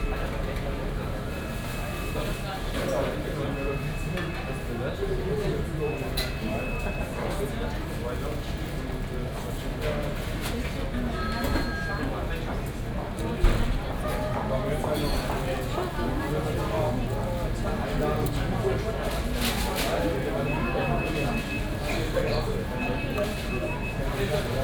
Bielefeld, Hauptbahnhof, main station. noisy atmosphere at MacDonalds, beeping sounds all over.
(tech note: Olympus LS5, OKM2+A3, binaural)